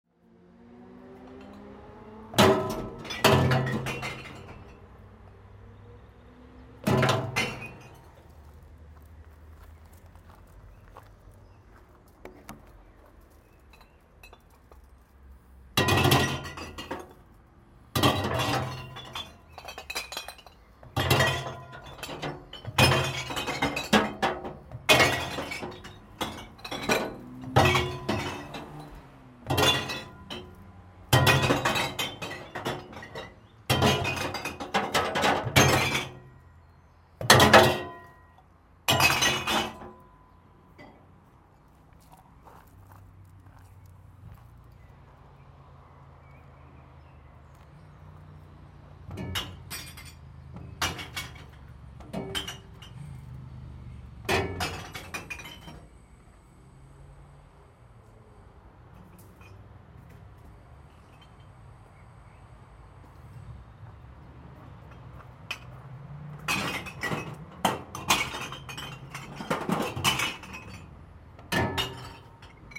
Ruppichteroth, glass recycling container
recorded july 1st, 2008.
project: "hasenbrot - a private sound diary"
Ruppichteroth, Germany